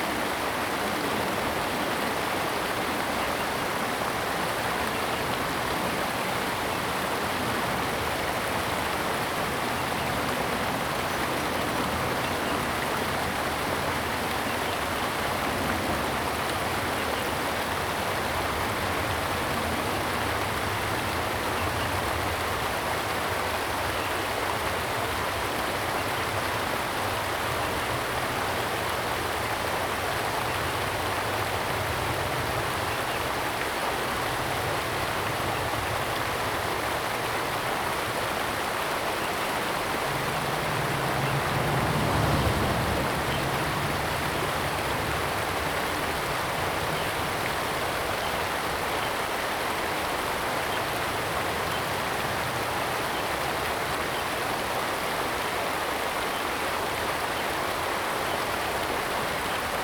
大屯溪, New Taipei City, Taiwan - Stream sound
Stream sound, Aircraft flying through, Traffic Sound
Zoom H2n MS+XY + H6 XY
15 April 2016